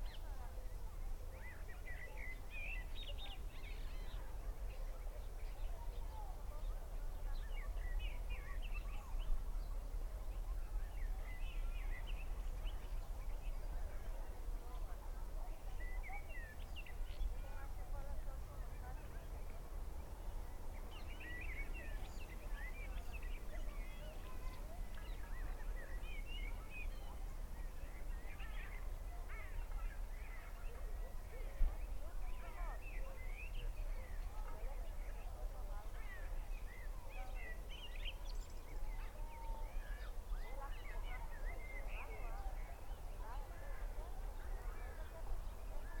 Klil, Israel - village spring sunset
the pretty village is all green in the spring blossom. sunset from uphill. far away people, all sort of birds, and surprised hikers pass by.